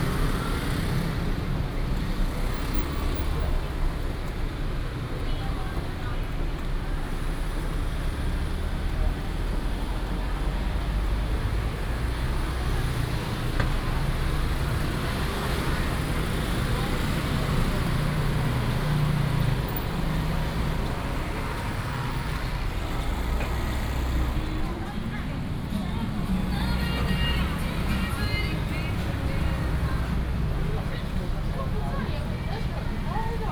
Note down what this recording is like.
walking in the Street, Traffic noise, Various shops